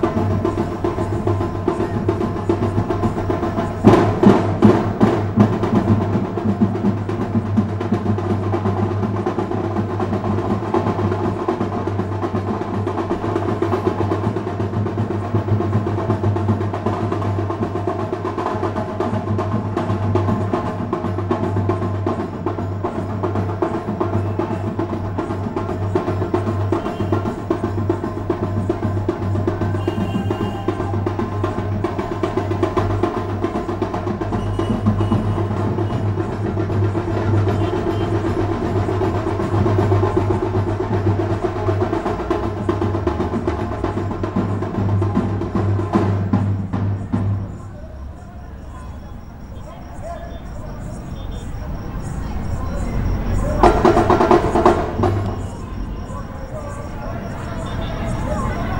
People playing drums at night for the Goddess Durga.
12 October 2015, 23:39